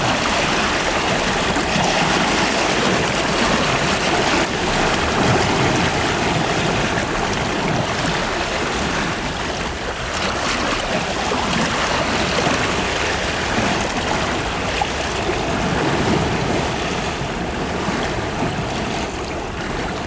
Rostrevor, UK - Carlingford Lough Tide After the Harvest Super Moon
Recorded with a pair of DPA 4060s and a Marantz PMD661.
Rostrevor, Newry, UK